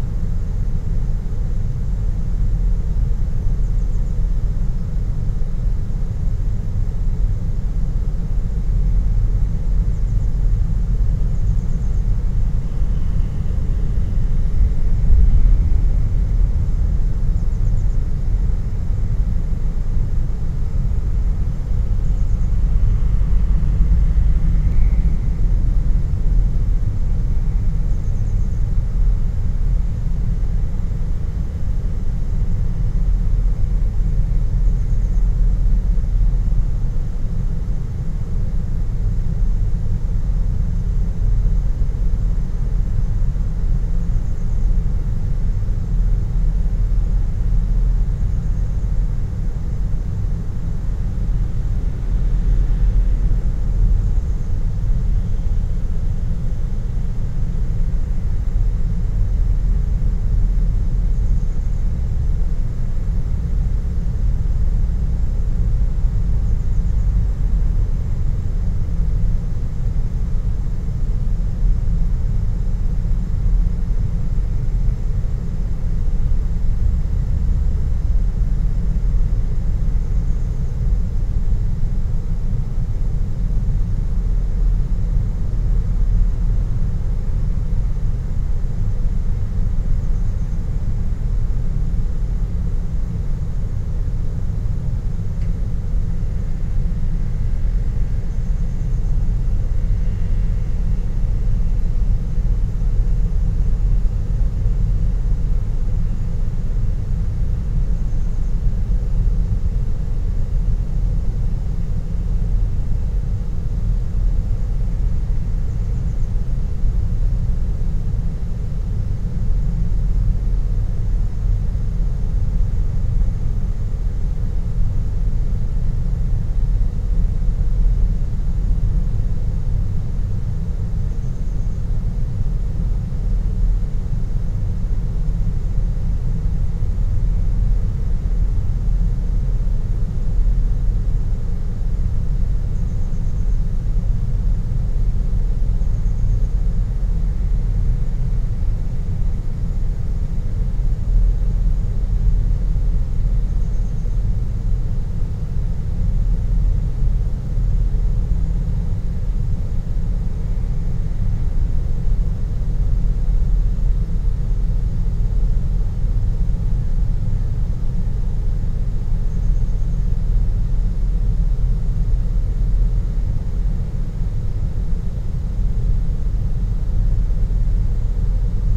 Aalst, België - Tereos factory
Leo Gheraertslaan. The Tereos Syral Aalst glucose syrup manufacturing plant.
Aalst, Belgium